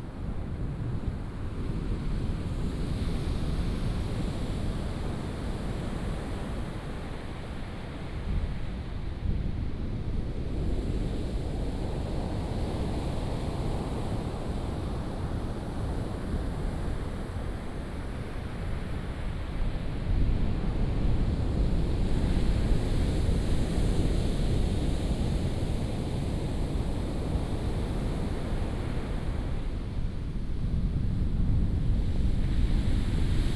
At the beach, Sound of the waves
Binaural recordings, Sony PCM D100+ Soundman OKM II